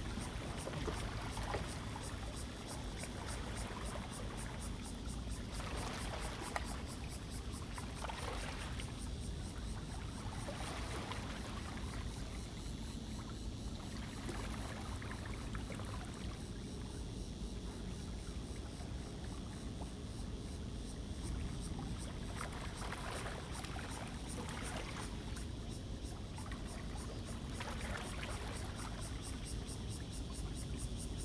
淡水河, New Taipei City - Morning in the river
Tide, In the dock, There are boats on the river
Zoom H2n MS+XY